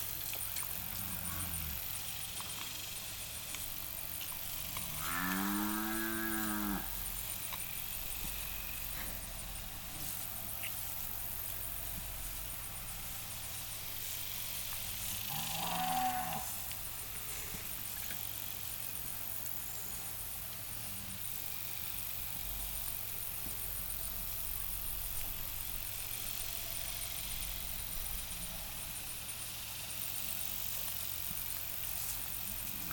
Recorded on foot with a Marantz PMD661 and a pair of DPA4060s mounted to a home made stereo bar/pistol grip
TX, USA